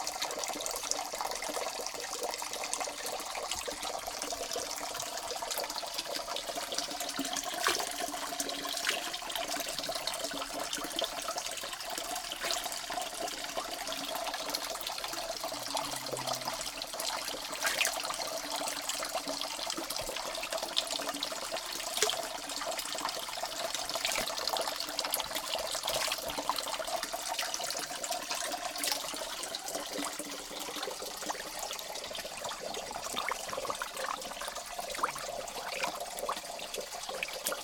This is a Natural Source of Water in green (village square) of Katapoliani, next to the Katapoliani Monastery.
Recorded with Zoom H2N by the soundscape team of EKPA university of Athens for KINONO Tinos Art Gathering.